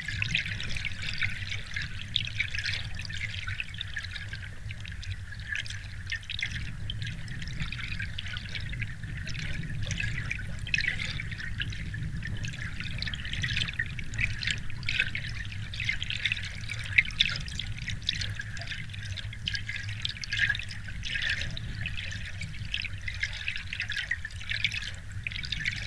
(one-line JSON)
{"title": "Vasaknos, Lithuania, underwater", "date": "2020-11-07 16:45:00", "description": "Hydrophone right at the bridge", "latitude": "55.69", "longitude": "25.79", "altitude": "107", "timezone": "Europe/Vilnius"}